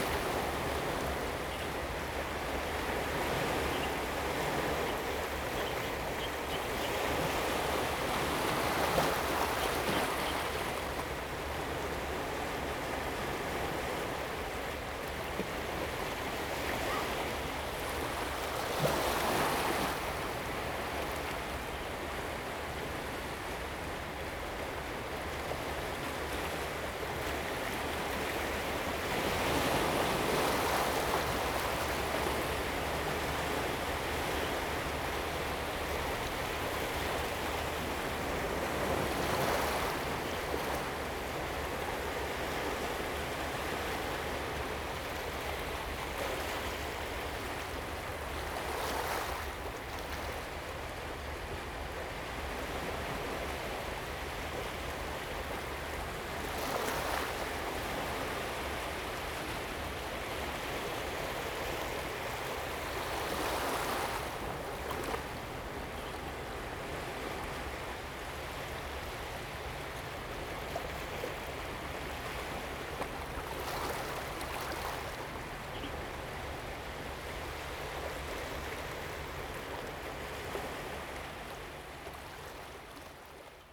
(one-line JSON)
{"title": "花瓶岩, Hsiao Liouciou Island - Waves and tides", "date": "2014-11-02 06:51:00", "description": "Waves and tides, Birds singing\nZoom H2n MS+XY", "latitude": "22.36", "longitude": "120.38", "altitude": "13", "timezone": "Asia/Taipei"}